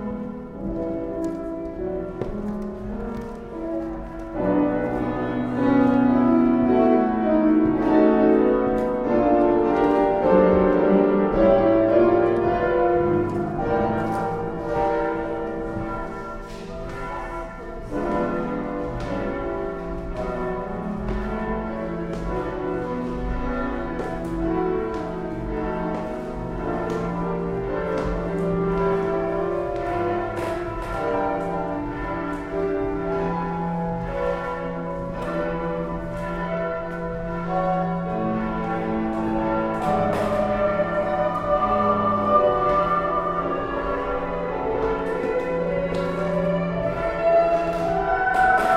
Sophienstraße, Berlin, Germany - wooden staircase
walk down and out and up and down on the wooden staircase to the choir, accompanied with church bells and choir exercises
25 May 2013, 12:00